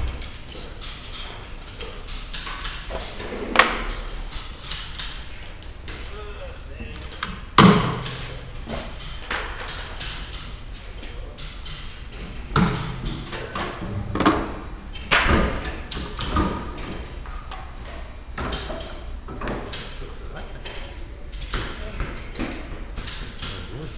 bensberg, rathenaustrasse, wheel change
In a reverbing construction hall at a mechanic who provides car wheel changes. The sounds of tools and pneumatic pressure and air release as the mechanic change wheels on several cars simultaneously. Also the sound of a car starting its engine inside the hall and the mechanics talking.
soundmap nrw - social ambiences and topographic field recordings
Bergisch Gladbach, Germany, 4 December 2011